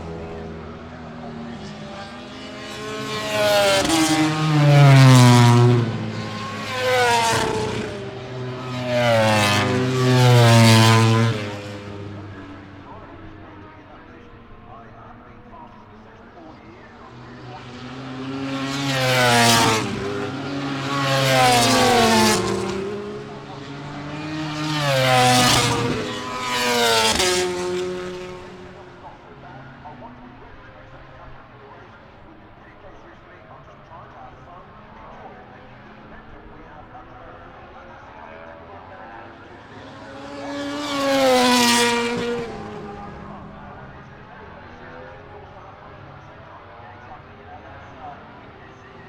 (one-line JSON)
{"title": "Unnamed Road, Derby, UK - British Motorcycle Grand Prix 2004 ... qualifying ...", "date": "2004-07-24 13:50:00", "description": "British Motorcycle Grand Prix 2004 ... qualifying part one ... one point mic to minidisk ...", "latitude": "52.83", "longitude": "-1.37", "altitude": "74", "timezone": "Europe/London"}